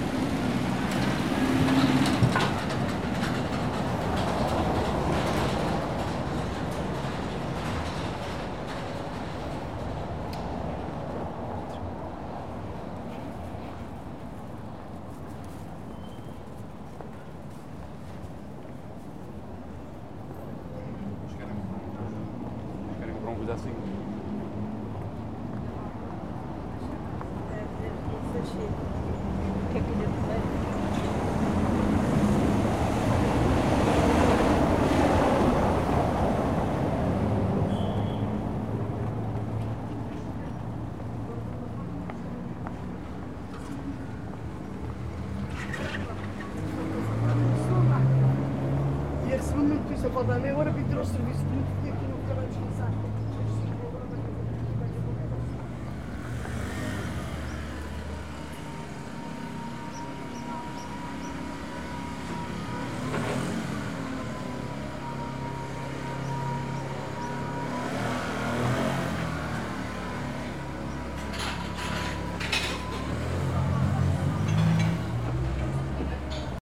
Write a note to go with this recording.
A small soundwalk on a normal summer day on the busiest street in the city. Recorded with Zoom Hn4 Pro.